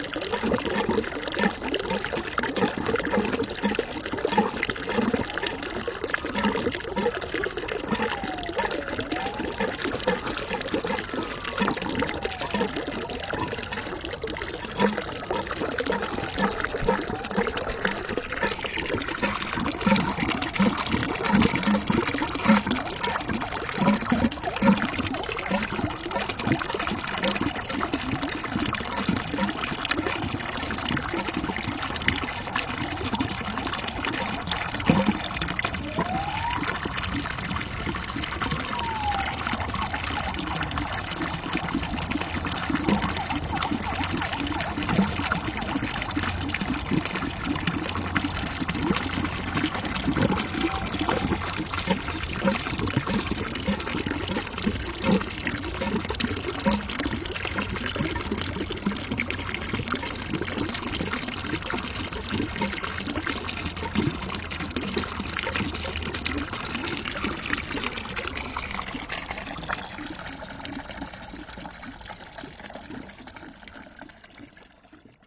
Petřínské sady, Praha, Czechia - Chrchlající pramen na Petříně
Pramen Petřínka vytéká z trubky v žulových kostkách a padá do oválného korýtka a do kanalizační vpusti. Nápis na mosazné tabulce "studánka Petřínka 1982" připomíná rok úpravy studánky do dnešní podoby. V roce 1986 proběhlo slavnostní otvírání studánky za účasti Elmara Klose, který bydlel v domku nahoře u Hladové zdi. Studánka byla tehdy ozdobena sochou, kterou během dvou dnů někdo ukradl. Zdroj vody byl v minulosti údajně měněn, dnes je do studánky sveden výtok z drobné štoly vylámané v pískovcových výchozech nad studánkou. Podzemní voda je pitná a přítok kolísá, občas v pravidelných periodách trubka chrchlá, jak reaguje na klesající hladinu ve studně. Celý Petřín - zahrady Kínská, Nebozízek, Seminářská, Lobkovická a Strahovská tvoří rozsáhlý vodní rezervoár. Voda byla ze Strahovských a Petřínských pramenů ještě v 60 letech rozváděna potrubím do malostranských paláců, nemocnice, klášterů, kašen, dětských brouzdališť, škol, apod.
Praha, Česká republika